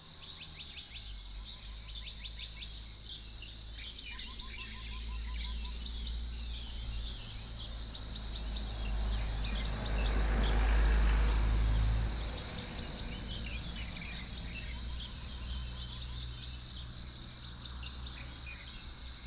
{"title": "種瓜路4-2號, TaoMi Li, Puli Township - Early morning", "date": "2015-06-11 05:00:00", "description": "Birdsong, Chicken sounds, Frogs chirping, Early morning", "latitude": "23.94", "longitude": "120.92", "altitude": "503", "timezone": "Asia/Taipei"}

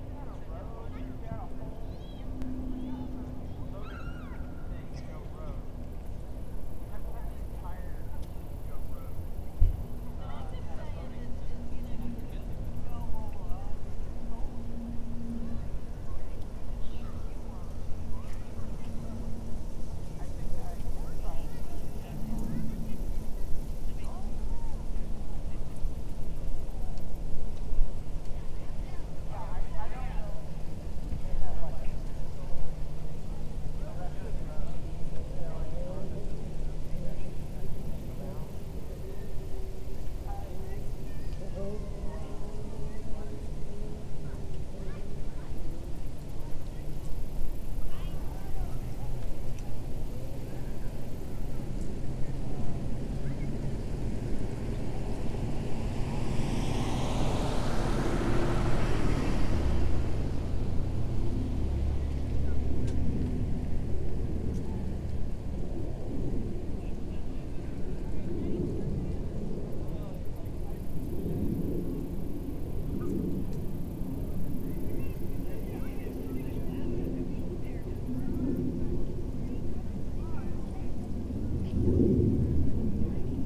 {"title": "Westside Park, Atlanta, GA, USA - Parking Lot", "date": "2021-11-24 15:24:00", "description": "Parking lot ambience captured at Westside Park. The park was relatively busy today. Children and adults can be heard from multiple directions. Many other sounds can be heard throughout, including traffic, trains, car doors slamming, people walking dogs, etc. Insects are also heard on each side of the recording setup. The recorder and microphones were placed on top of the car.\n[Tascam DR-100mkiii & Primo EM272 omni mics]", "latitude": "33.78", "longitude": "-84.44", "altitude": "263", "timezone": "America/New_York"}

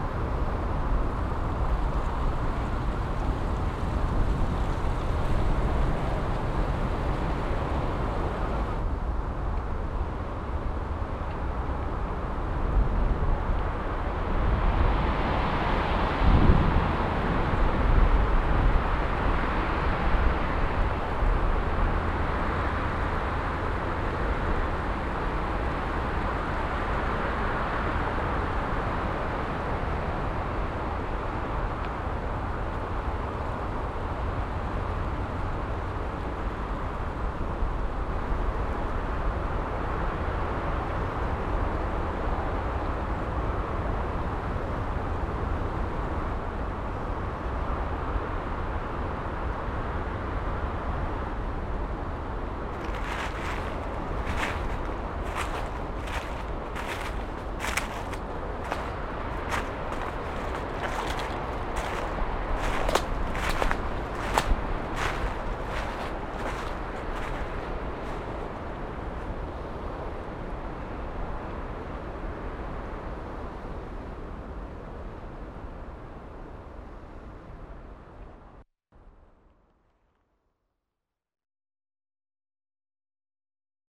{"title": "heiligenhaus, wiel, gang durch feld", "date": "2008-07-02 22:56:00", "description": "gang durch durchfrostetes feld, morgens\nnahe flugfeld\nproject: :resonanzen - neanderland - soundmap nrw: social ambiences/ listen to the people - in & outdoor nearfield recordings, listen to the people", "latitude": "51.30", "longitude": "6.96", "altitude": "152", "timezone": "Europe/Berlin"}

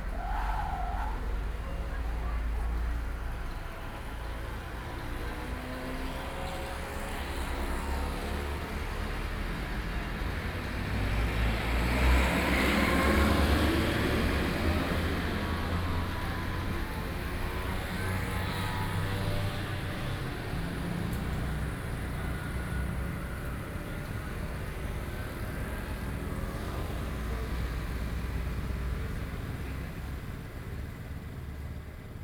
{"title": "Puxin, Taoyuan - Corner", "date": "2013-08-14 12:12:00", "description": "Noon, the streets of theCorner, traffic noise, Sony PCM D50+ Soundman OKM II", "latitude": "24.92", "longitude": "121.19", "altitude": "189", "timezone": "Asia/Taipei"}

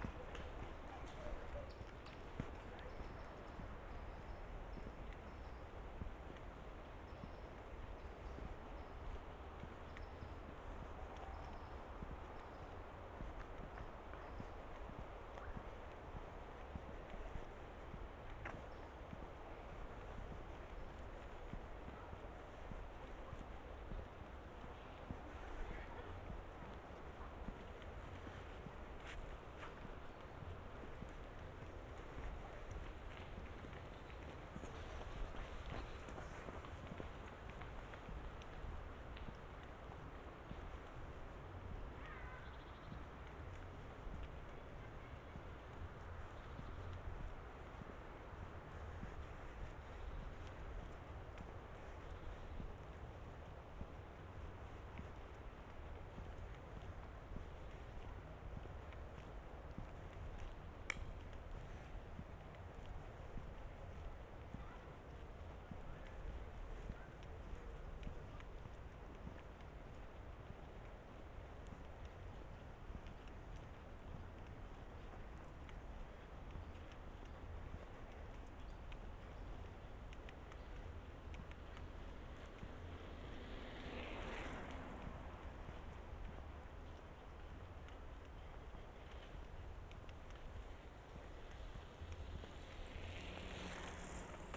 {"title": "Ziegelwiese Park, Halle (Saale), Germania - WLD2020, World Listening Day 2020, in Halle, double path synchronized recording: B", "date": "2020-07-18 19:48:00", "description": "Halle_World_Listening_Day_200718\nWLD2020, World Listening Day 2020, in Halle, double path synchronized recording\nIn Halle Ziegelwiese Park, Saturday, July 18, 2020, starting at 7:48 p.m., ending at 8:27 p.m., recording duration 39’18”\nHalle two synchronized recordings, starting and arriving same places with two different paths.\nThis is file and path B:\nA- Giuseppe, Tascam DR100-MKIII, Soundman OKMII Binaural mics, Geotrack file:\nB – Ermanno, Zoom H2N, Roland CS-10M binaural mics, Geotrack file:", "latitude": "51.49", "longitude": "11.95", "altitude": "76", "timezone": "Europe/Berlin"}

